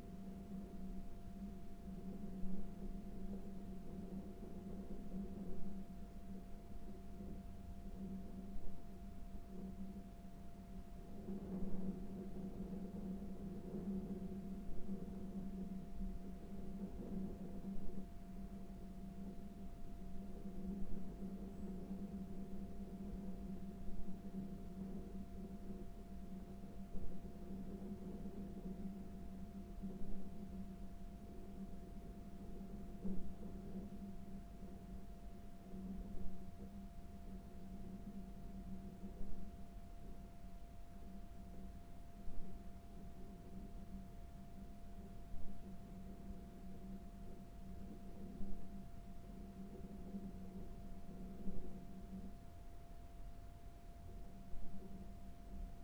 neoscenes: wind in bunker pipe
Wendover, UT, USA, 16 April 2010